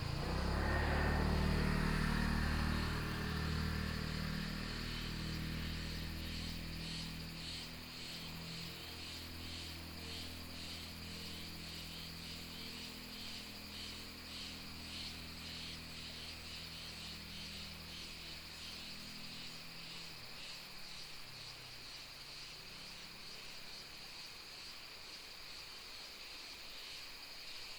八仙橋, Emei Township, Hsinchu County - On the bank of the river
On the bank of the river, Cicadas sound, Sound of water, Traffic sound, Binaural recordings, Sony PCM D100+ Soundman OKM II
Hsinchu County, Taiwan, 1 November